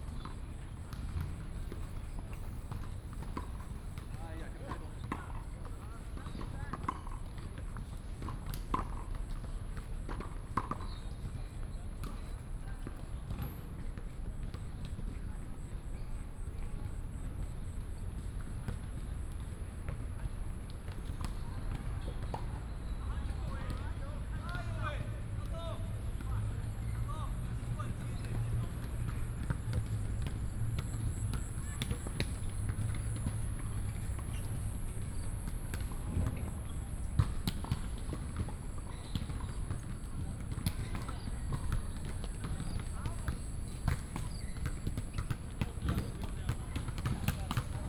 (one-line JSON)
{"title": "臺灣大學, Da'an District, Taipei City - At the University Stadium", "date": "2015-07-02 19:40:00", "description": "At the University Stadium", "latitude": "25.02", "longitude": "121.54", "altitude": "17", "timezone": "Asia/Taipei"}